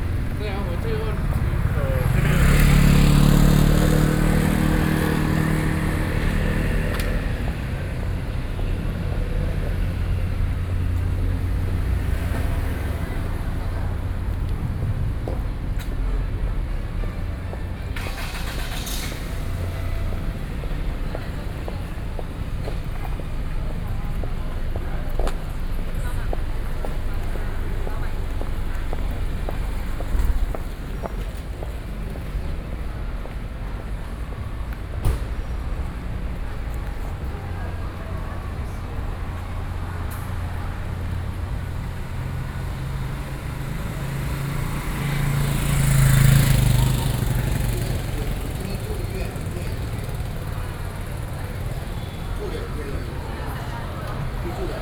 Ln., Sec., Dunhua S. Rd., Da’an Dist., Taipei City - SoundWalk
Daan District, Taipei City, Taiwan, November 2012